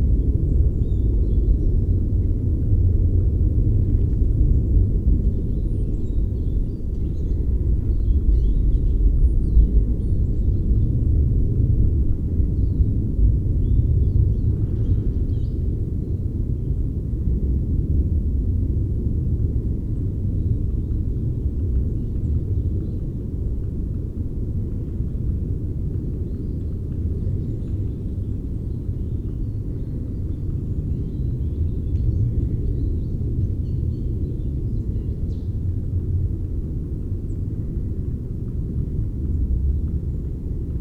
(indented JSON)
{
  "title": "Morasko Nature Reserver, path - jet",
  "date": "2015-02-13 13:54:00",
  "description": "roar of a military jet plane spreading over the forest.",
  "latitude": "52.48",
  "longitude": "16.90",
  "altitude": "133",
  "timezone": "Europe/Warsaw"
}